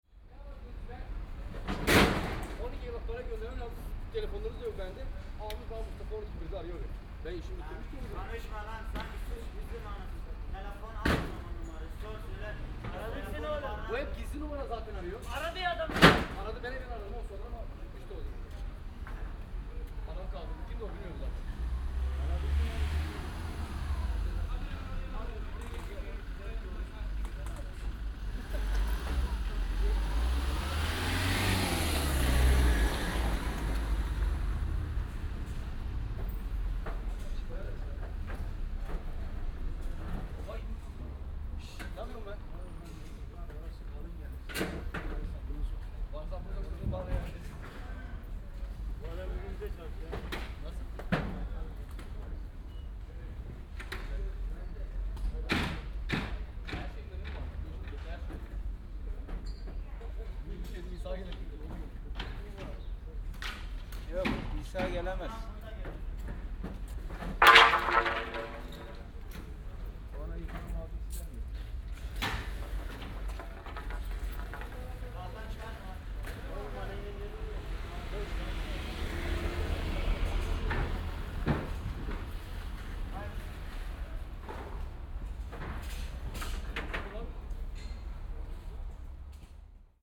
Münzfernsprecher Maybachufer 13 - maybachufer, marktaufbau
09.09.2008 8:40
am münzfernsprecher, marktaufbau
Berlin, Germany, 9 September 2008